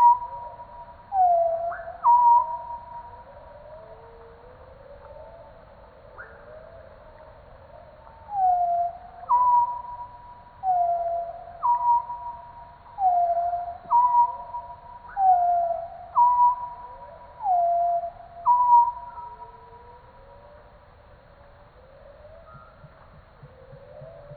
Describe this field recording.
Zerkow - Czeszewo Landscape Park; Warta River Oxbow Lake; Zoom H6 & Rode NTG5